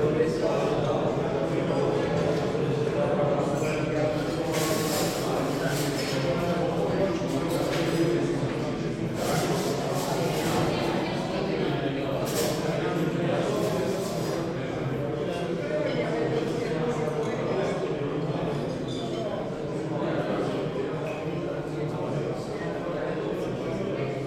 Smíchovské nádraží restaurace Oáza Praha, Česká republika - Ambience during the lunch
Prague-Prague, Czech Republic, 14 November